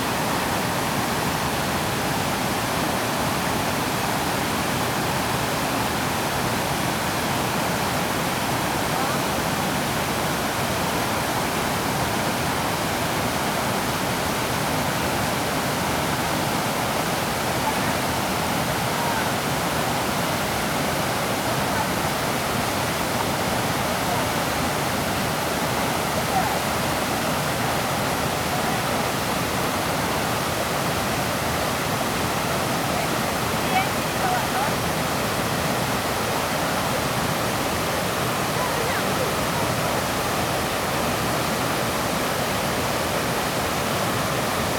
南山溪, 仁愛鄉南豐村, Nantou County - Streams and waterfalls
Waterfalls facing far away
Zoom H2n MS+XY +Sptial Audio